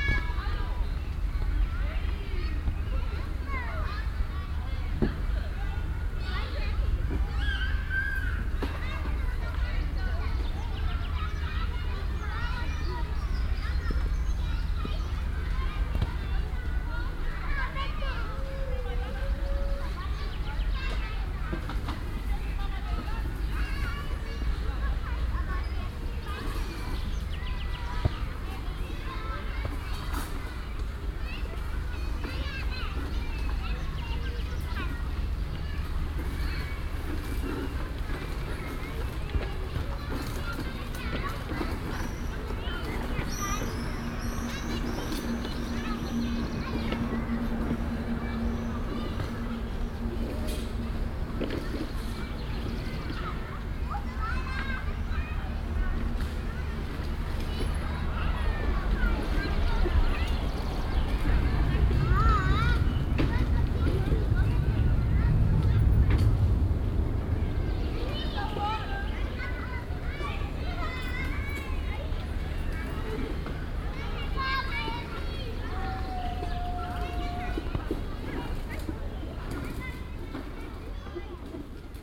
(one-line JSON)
{"title": "cologne, oberlaender wall, kita", "date": "2008-06-20 14:19:00", "description": "soundmap nrw/ sound in public spaces - in & outdoor nearfield recordings", "latitude": "50.92", "longitude": "6.97", "altitude": "52", "timezone": "Europe/Berlin"}